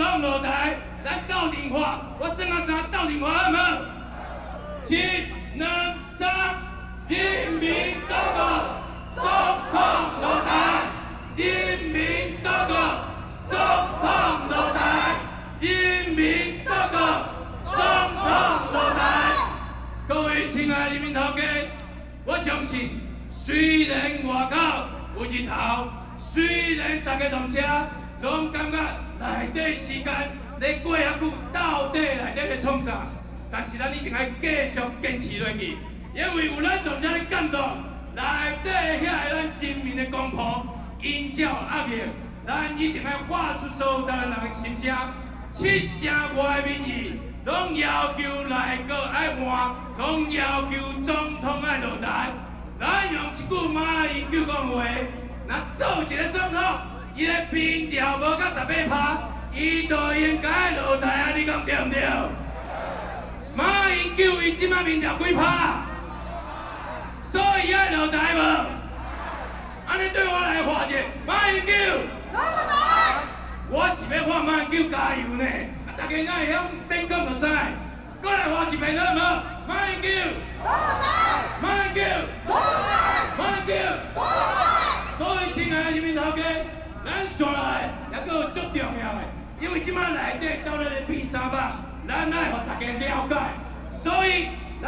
Legislative Yuan, Taiwan - Shouting slogans
Protest rally, Shouting slogans, Binaural recordings, Sony PCM D50 + Soundman OKM II